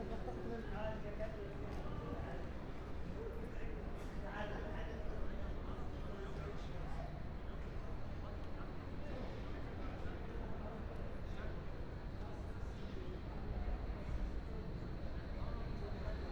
Alexanderplatz, Berlin - drummer, station ambience
a man improvising on empty plastic buckets, in front of the Alexanderplatz station entrance
(Sony PCM D50, Primo EM172)
24 May 2017, ~21:00